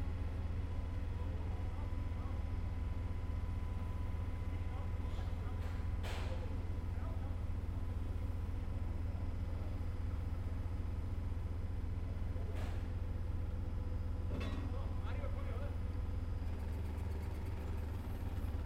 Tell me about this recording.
recorded outside, trains, repairing works etc. june 6, 2008. - project: "hasenbrot - a private sound diary"